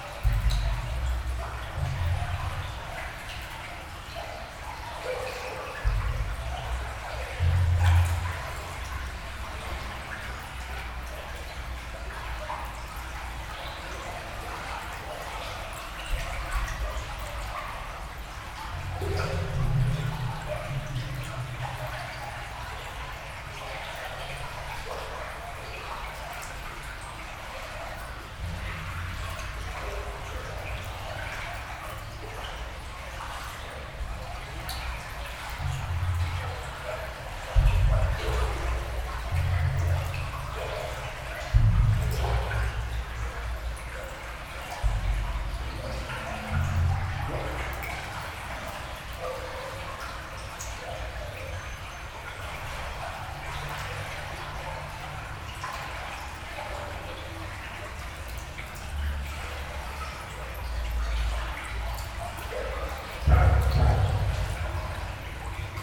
Valenciennes, France - Underground river
The Rhonelle underground river, below the Valenciennes city. Distant sounds of the connected sewers, rejecting dirty water into the river.
24 December 2018, 11:30